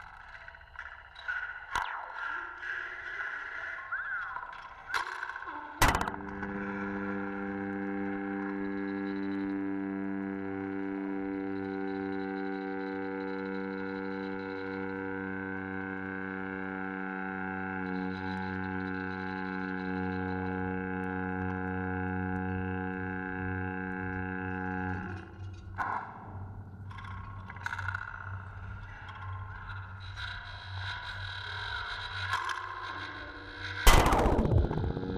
Mega Bridge, Bangkok cable tensioning